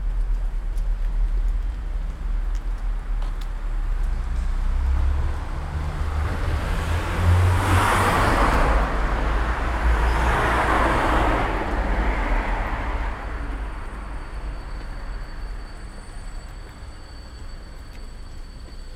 Neustadt-Nord, Cologne, Germany - Belgisches Viertel night walk
night walk around the block, Belgian Quarter / Belgisches Viertel: restaurants closing, people in the street and gathering at Brüsseler Platz, a strange hum, sound of the freight trains passing nearby can be heard everywhere in this part of the city.
(Sony PCM D50, DPA4060)